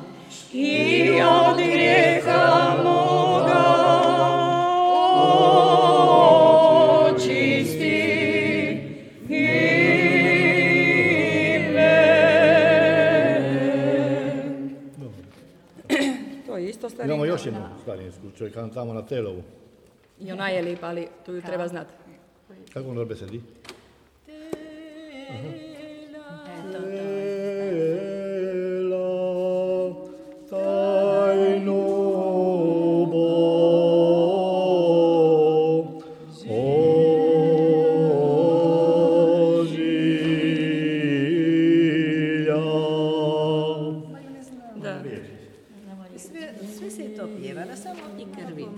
Dobrinj, island Krk, Croatia, liturgical singing - voices from vicinity
sung by the town's choir, recorded in the church before the mass